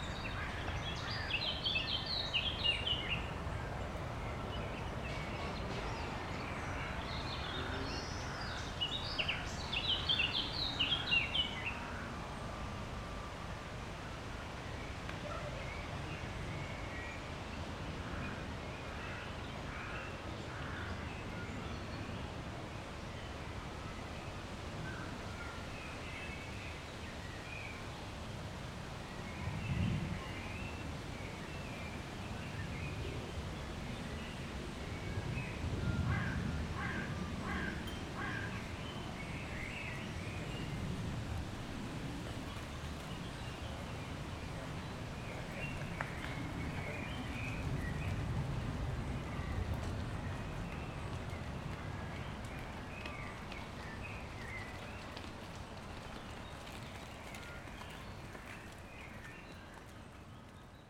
Park ambience with birds. Recorded with a AT BP4025 (XY stereo) into a SD mixpre6.